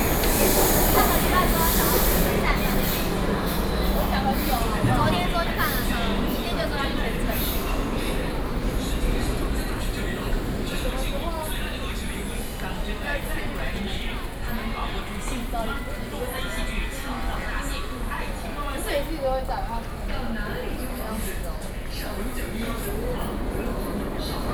Taipei, Taiwan - Taipei MRT Station
Taipei MRT Station, Sony PCM D50 + Soundman OKM II